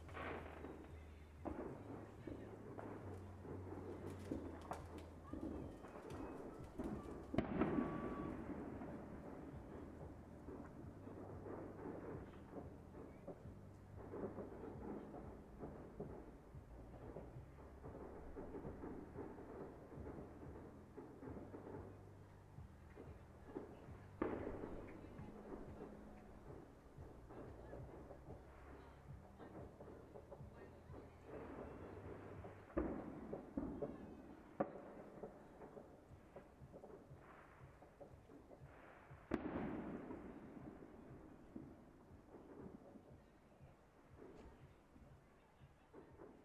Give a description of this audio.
Recording from my friends balcony in a street in Colchester, listening to the fireworks and people singing in the surrounding gardens etc. Recorded with Mixpre6 and USI Pro - original recording was 2 hours long but I decided to cut to the main part, as the rest was just silence or distant talking